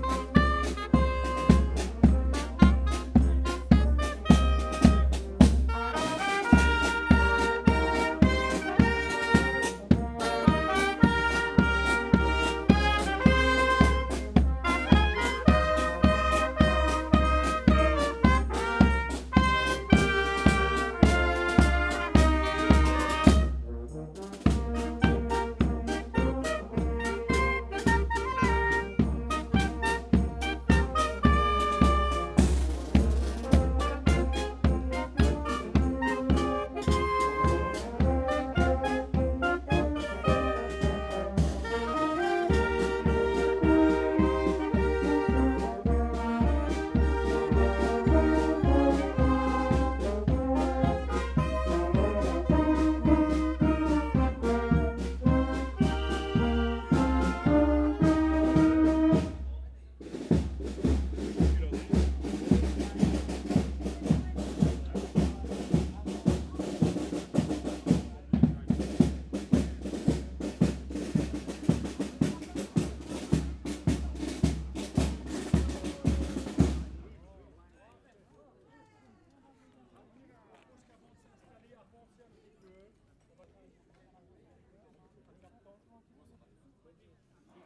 Court-St.-Étienne, Belgique - Fanfare
During the annual feast of Court-St-Etienne, the local fanfare is playing, walking in the streets. This is called : La fanfare de Dongelberg.